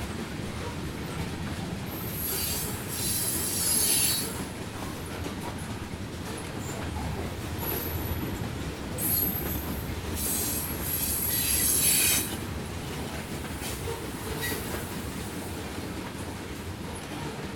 Train passing through Bluffton, IN 46714, USA